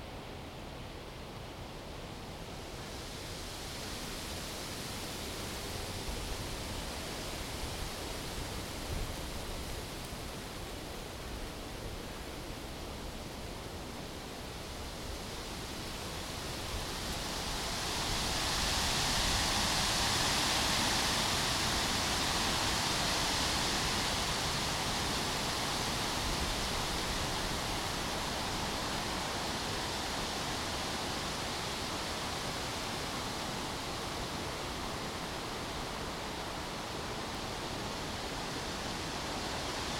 Сергиев Посад, Московская обл., Россия - Wind noise in the trees
Wind noise in the trees. The wind gets stronger, weakens and then gets stronger again. Sometimes you can hear the creak of trees and birds and the noise of traffic in the distance.
Recorded with Zoom H2n, surround 2ch mode
Центральный федеральный округ, Россия